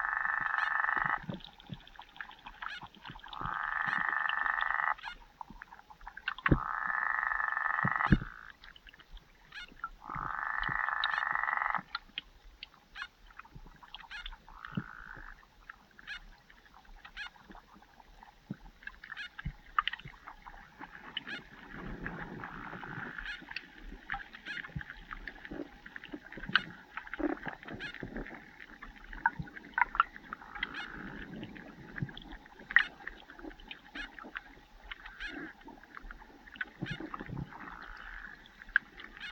Unnamed Road, Lithuania. tadpoles
Hydrophone recording in a pond. Hundreds of tadpoles circling around my underwater mic.
Utenos apskritis, Lietuva, 30 May 2020